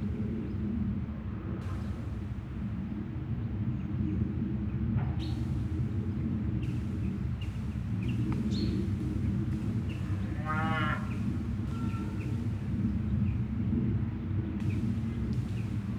Huldange, Luxemburg - Huldange, evening atmosphere with bells
Abendstimmung im Ort. Der Klang eines Flugzeuges das am Himmel lang zieht, Kühe und Schafe muhen und mähen, Kinderstimmen, Vögel und die Glocken der Kirche.
An evening atmosphere of ther town, A plane passing by in the sky, cows and sheeps, childrens voices, birds and the bell of the church.
4 August 2012, ~20:00